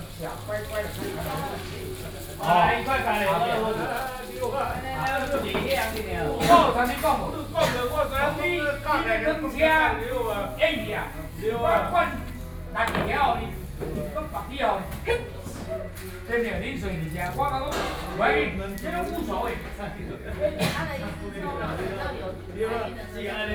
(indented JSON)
{
  "title": "Ruìpíng Rd, New Taipei City - a small restaurant",
  "date": "2012-11-13 14:56:00",
  "latitude": "25.04",
  "longitude": "121.78",
  "altitude": "182",
  "timezone": "Asia/Taipei"
}